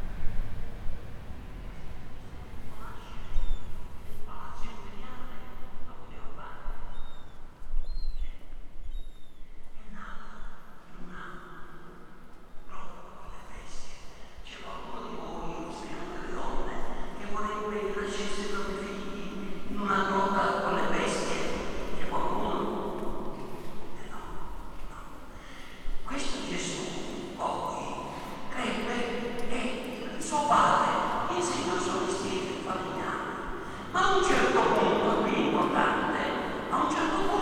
Sound postcard of the streets of Palermo on the 23rd of June. This track is a composition of different recordings made on this day in the garden of the Palazzo dei Normanni, in San Giovanni degli Eremiti, in the cathedral of Palermo and in the streets of the historical center.
Recorded on a Zoom H4N.